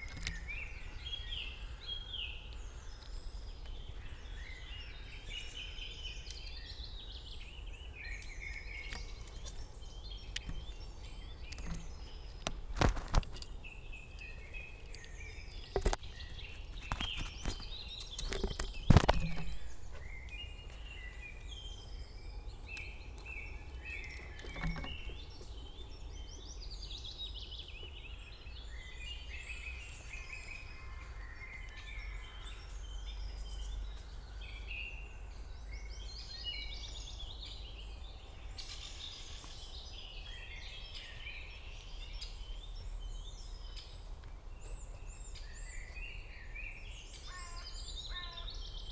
{
  "title": "Königsheide, Berlin - spring morning ambience /w squirrel",
  "date": "2020-05-21 06:45:00",
  "description": "at the pond, Königsheide Berlin, wanted to record the spring morning ambience, when a curious squirrel approached, inspecting the fluffy microfones, then dropping one down.\n(Sony PCM D50, DPA4060)",
  "latitude": "52.45",
  "longitude": "13.49",
  "altitude": "35",
  "timezone": "Europe/Berlin"
}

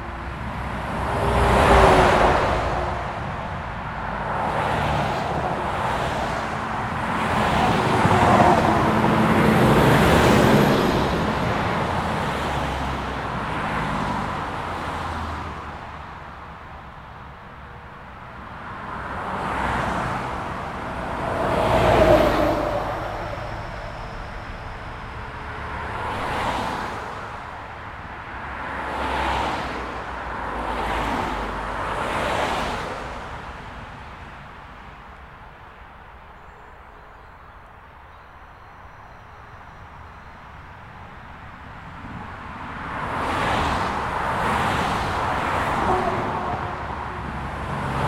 {
  "title": "JCJJ+FP Newton Abbot, UK - Haldon Forest wildlife crossing point",
  "date": "2022-05-17 12:53:00",
  "description": "This recording was made using a Zoom H4N. The recorder was positioned next to the northbound carriageway of the A38. This is the point at which deer currently try and cross the A38 to get to the other side of Haldon Forest. There was a continuous flow of traffic at this time of day making crossing impossible. Road casualty deer are often seen at this point. This area has been identified as a good point for a potential green bridge for safe wildlife passage. Bizarrely dormice have been found living in the central reservation.This recording is part of a series of recordings that will be taken across the landscape, Devon Wildland, to highlight the soundscape that wildlife experience and highlight any potential soundscape barriers that may effect connectivity for wildlife.",
  "latitude": "50.63",
  "longitude": "-3.57",
  "altitude": "193",
  "timezone": "Europe/London"
}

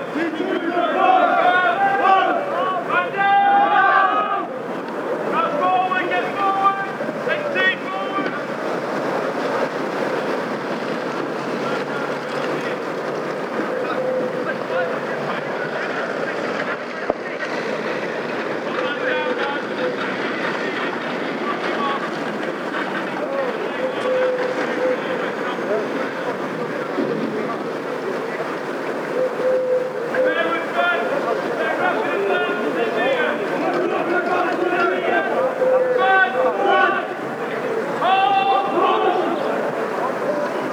{
  "title": "Colchester, Essex, UK - Soldiers Riot Training, Colchester.",
  "date": "2015-03-04 12:30:00",
  "description": "Soldiers preparing for riots.",
  "latitude": "51.87",
  "longitude": "0.88",
  "altitude": "37",
  "timezone": "Europe/London"
}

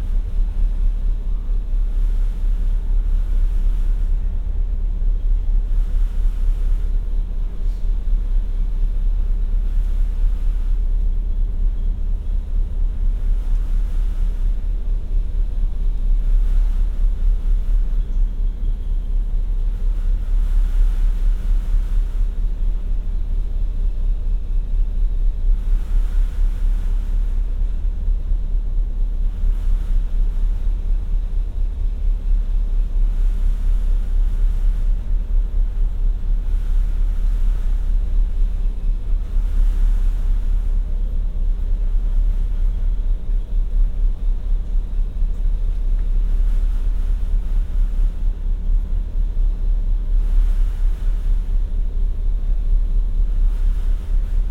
{
  "title": "Dover Strait - Pride of Canterbury, aft",
  "date": "2019-09-30 10:31:00",
  "description": "Engine drone inside the P&O ferry Pride of Canterbury, about mid-channel from Calais to Dover. Binaural recording with Sennheiser Ambeo headset - use headphones for listening.",
  "latitude": "50.97",
  "longitude": "1.66",
  "timezone": "Europe/Paris"
}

